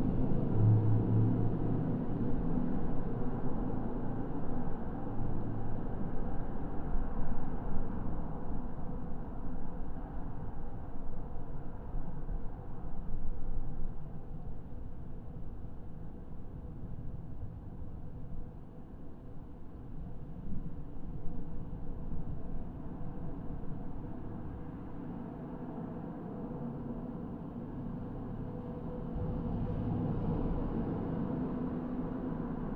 Jūrmala, Latvia, sculpture Jurmala Globe
listening to globe sculpture with contact mics